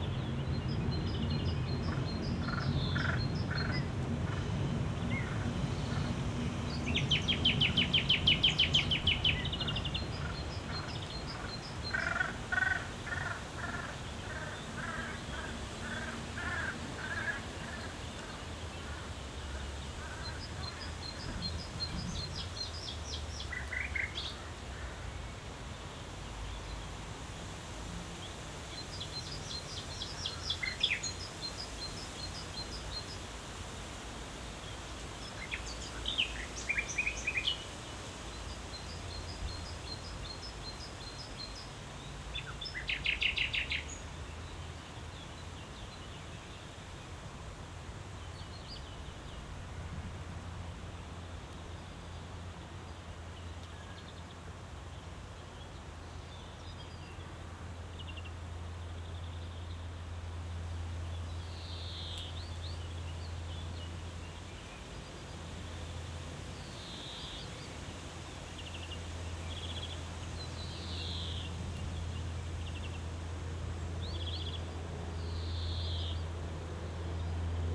allotment, Treptow, Berlin - abandoned garden, plane crossing
Sonic exploration of areas affected by the planned federal motorway A100, Berlin.
(SD702, Audio Technica BP4025)